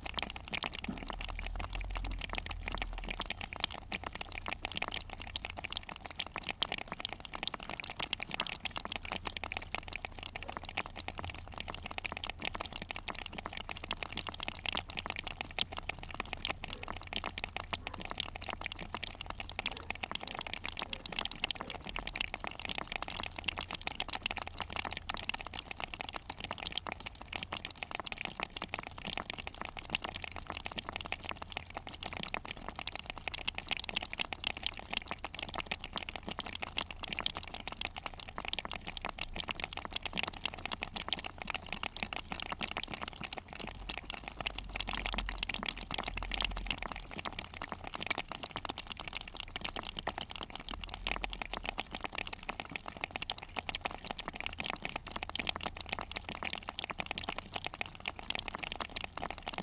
Endemic spider crabs after local experienced fisherman caught them by hand and self made archaic tools.
∞Thank you Û∞
Pontevedra, Galicia / Galiza, España, 4 December 2015